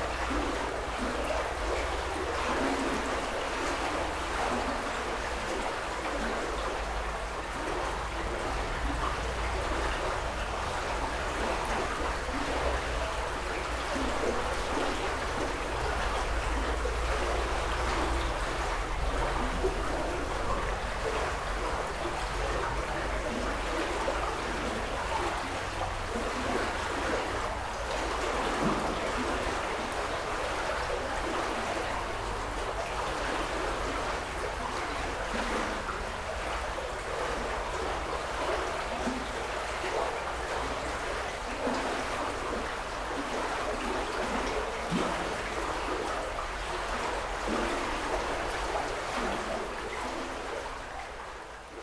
Utena, Lithuania, under the bridge